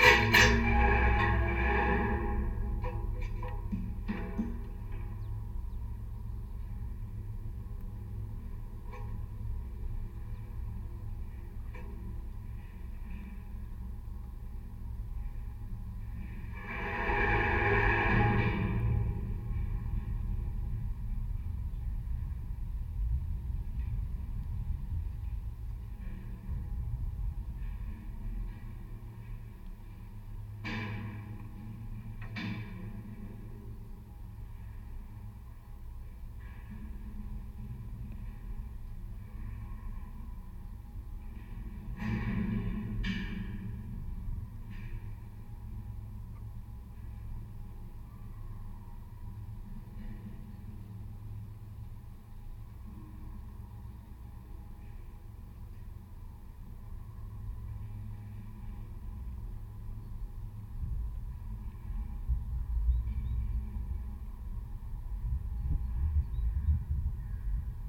Vyžuonos, Lithuania, water tower
contact microphones on a lightning rod of abandoned watertower
Utenos apskritis, Lietuva